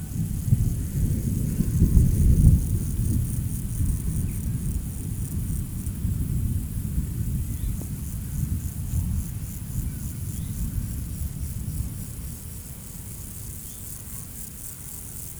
During this evening, it's an hot and threatening athmosphere. A violent storm is brewing on the horizon. There's a lot of locusts and mosquitoes. During this night, we had 7 dangerous storms, whose 2 were enormous, and 2 storms again in the morning. Exhausting !
Châtillon-sur-Seine, France, 31 July, ~22:00